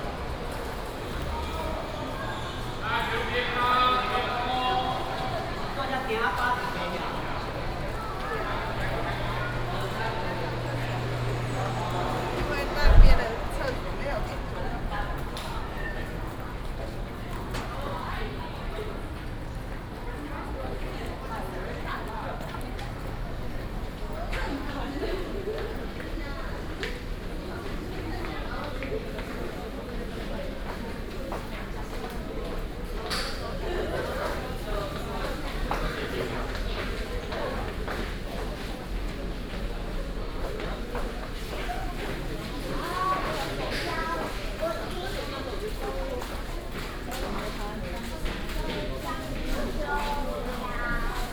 基隆火車站, Keelung City - Walking in the station
Go outside the station